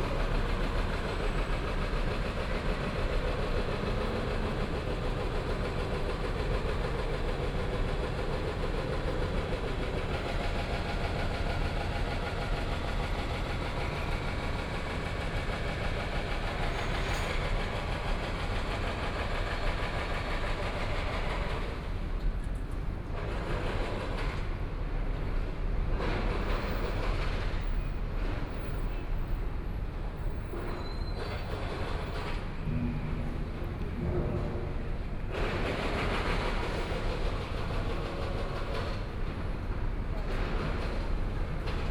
三民區港西里, Kaohsiung City - Construction Sound
Sound from station construction
Kaohsiung City, Taiwan, 15 May 2014